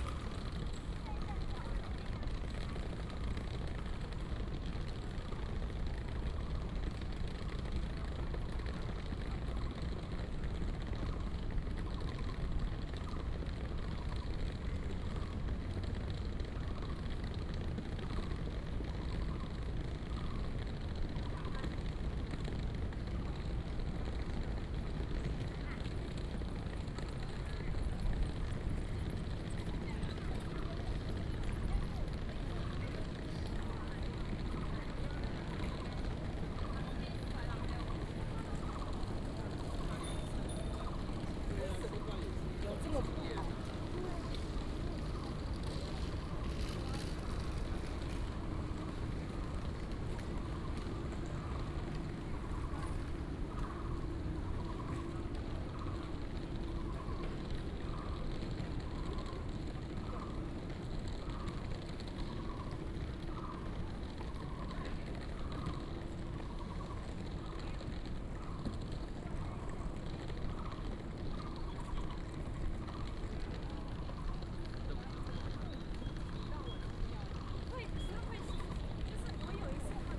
臺灣大學, Zhoushan Rd., Da’an Dist., Taipei City - Follow front trunk
From the MRT station, Starting from the main road, walking into college, Walking across the entire campus
Taipei City, Taiwan, July 2015